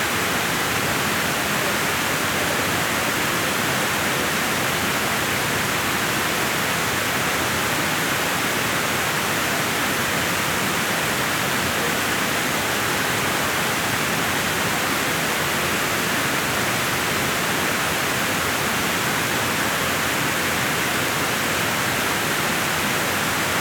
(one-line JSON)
{"title": "W 49th St, New York, NY, USA - Waterfall Tunnel, NYC", "date": "2022-08-23 16:30:00", "description": "Sounds from the Mini Plexiglass Waterfall Tunnel in Midtown.", "latitude": "40.76", "longitude": "-73.98", "altitude": "19", "timezone": "America/New_York"}